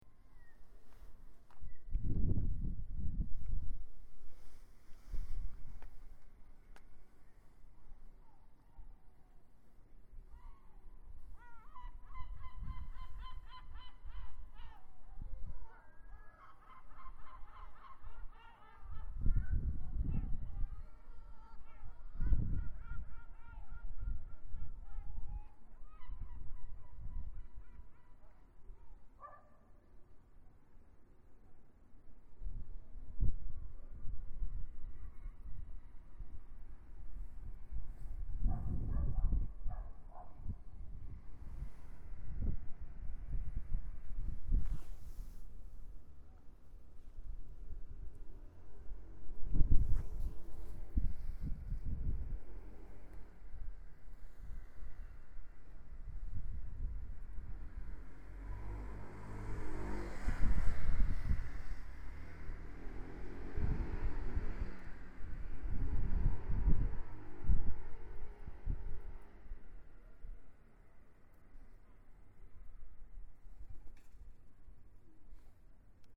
Via Cavour, Piombino LI, Italy - Seagulls in Piombino
Seagulls crying, some dogs barking right in front of the local public library. Piombino is crowded with yellow legged gulls crying all day long and sometimes attacking even humans. Though, I love them and recognize them as one of the dearest sounds of my hometown.